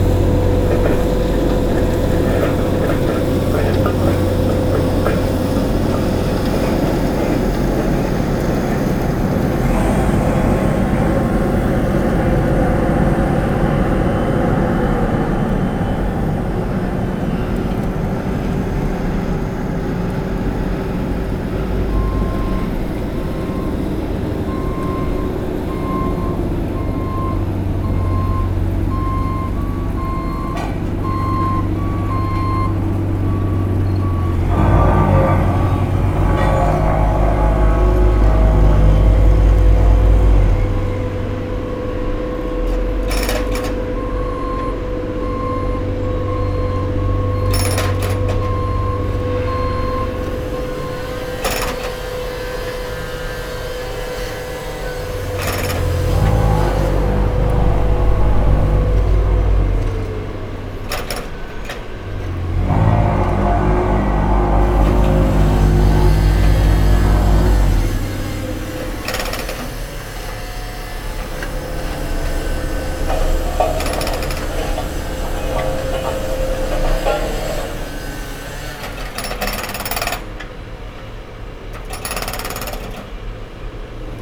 Road Works - Malvern Wells, Worcestershire, UK
Men and machines resurfacing the road. Recorded with a Sound devices Mix Pre 6 II and 2 Sennheiser MKH 8020s